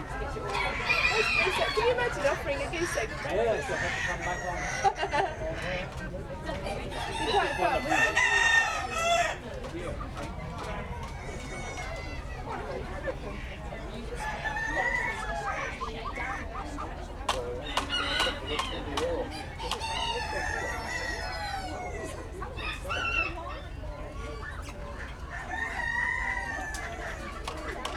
Poultry Tent at Devon County Show
Exeter, Devon, UK